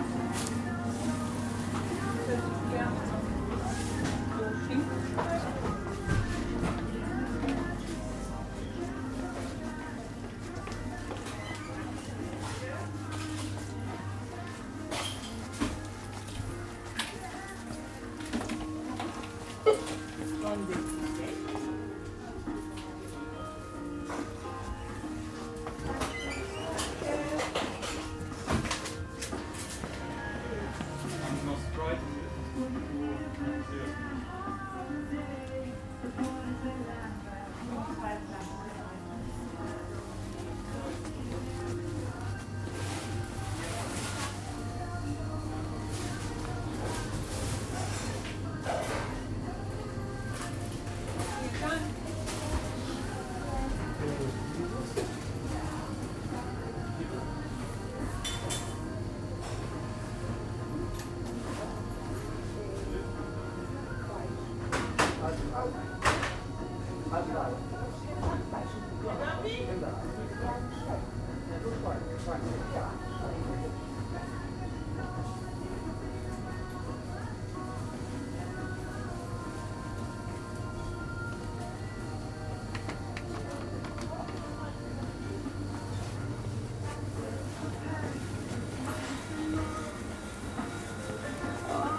Ruppichteroth, inside supermarket
recorded july 1st, 2008.
project: "hasenbrot - a private sound diary"